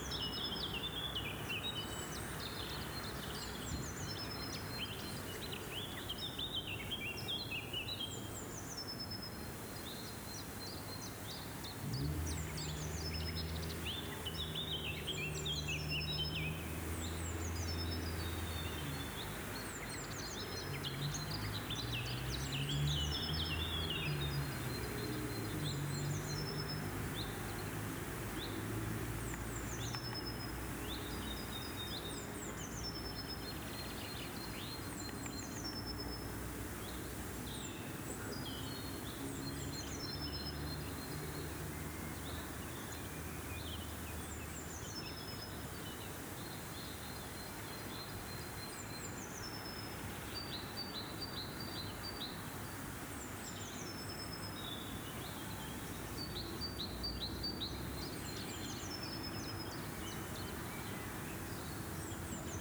Marienburgpark, Parkstraße, Monheim am Rhein, Deutschland - Marienburgpark, Frühling in den Wiesen
Aufnahme in den Wiesen des Marienburgparks an einem sonnigen, leicht windigem Tag im Frühling 22
soundmap nrw:
social ambiences, topographic field recordings